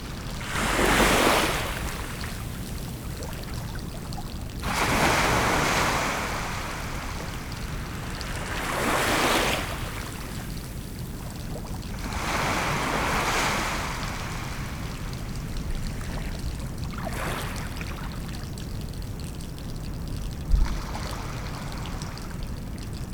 Seahouses, UK - wavelets on a landing ramp ...
wavelets on a landing ramp ... lavalier mics clipped to baseball cap ... background noise ... traffic ... boats ... rain ... bird call from oystercatcher ... lesser black-backed gull ... herring gull ... golden plover ... redshank ...
4 November 2018, 16:00